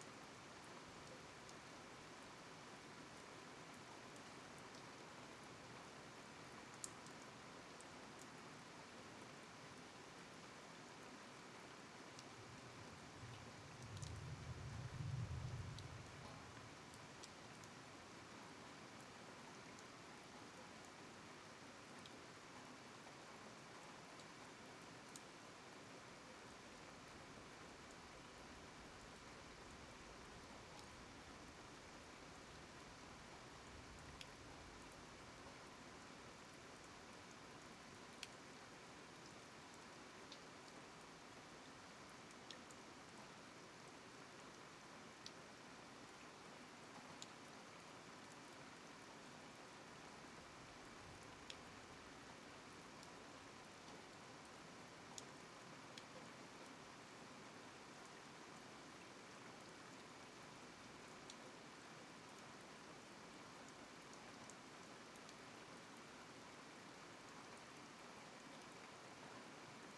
Borough of Colchester, UK - Thunderstorm Over Colchester
Thunderstorm recorded with Roland R44-e + USI Pro overnight(excerpt)
29 May 2017